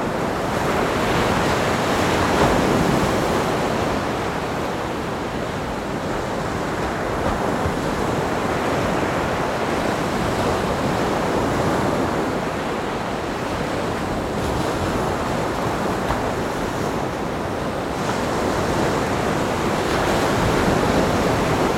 Sueca, Province de Valence, Espagne - La Playa de Motilla pertenece el término municipal de Sueca (Valencia)
alone on the beach with Yuki (rode NT-4/Blimp + Fostex FR-2)